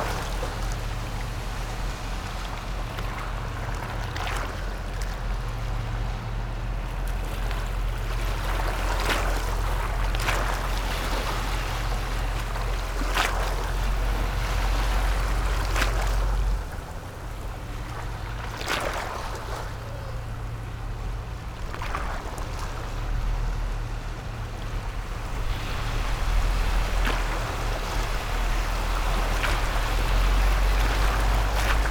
Bali, New Taipei City - Tidal sound
New Taipei City, Bali District, 左岸八里碼頭